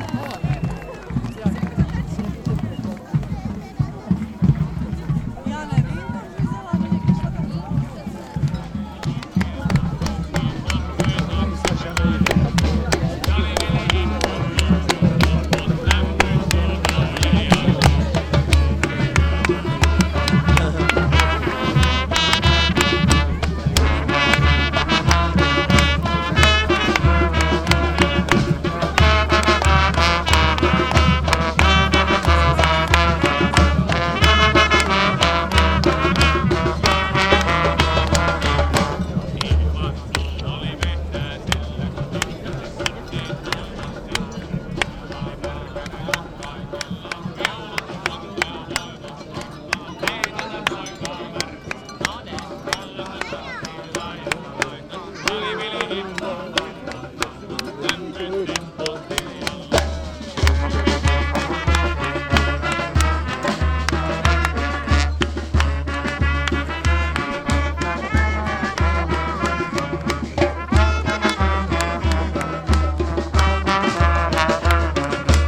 Roztoky, Česká republika - Masopust
Rather new, or recovered tradition of the Carnival celebration happens annually Saturday before the Ash Tuesday as a join venture between Prague districts Roztoky and Únětice. Sometimes almost 2000 people in masks and with live music gather and join the procession, starting from the village of Roztoky and the other from Únětice. Finally there is a perfomative meeting at Holý vrch with dance and music and both then all continues to a party with live music in Kravín pub.
Roztoky, Czech Republic, 9 February 2013